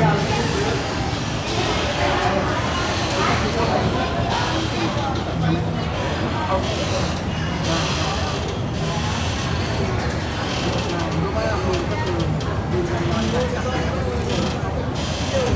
Than Thien Pier
(zoom h2, build in mic)